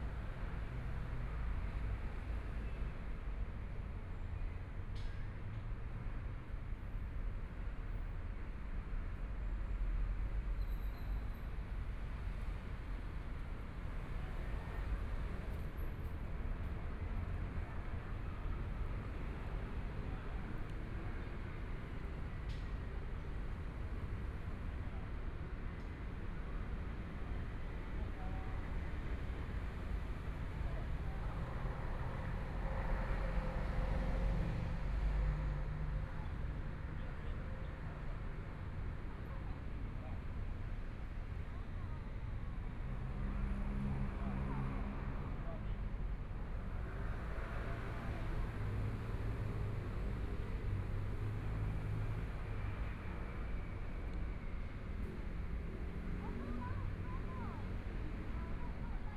XinShou Park, Taipei City - Sitting in the park
Sitting in the park, Environmental sounds, Motorcycle sound, Traffic Sound, Binaural recordings, Zoom H4n+ Soundman OKM II
6 February 2014, ~18:00